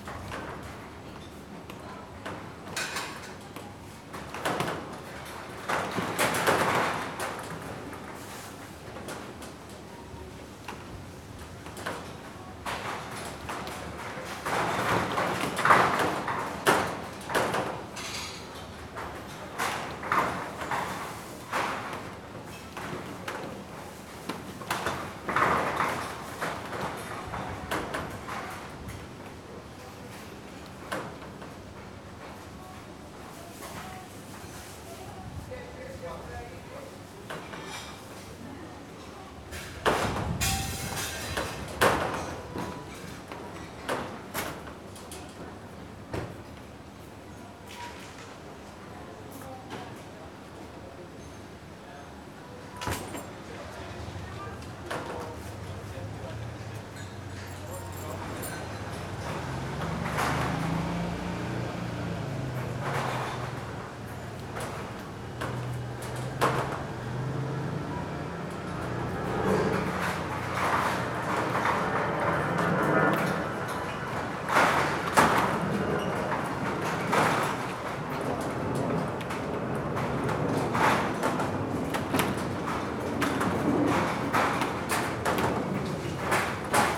Lisbon, Travessa de São Bartolomeu - makeshift roof
sound nature of a scraggy scaffolding, a few stories high. on top of it a makeshift roof made of random wooden and random boards. due to strong wind the whole roof bounces, rattles and bangs against the pipes of the scaffolding. also sounds of a nearby restaurant.